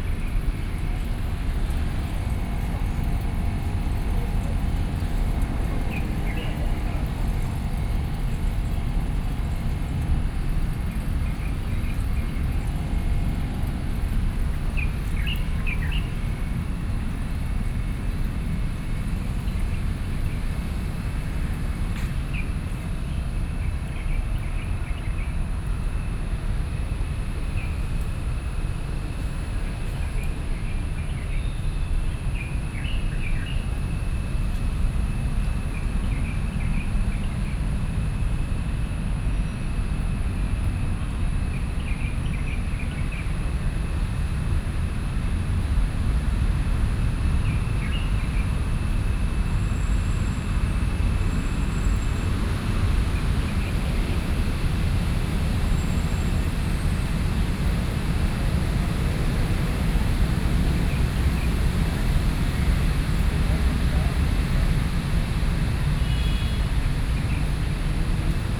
Taipei Botanical Garden, Taiwan - In the Park
in the park, Sony PCM D50 + Soundman OKM II
4 June 2012, ~18:00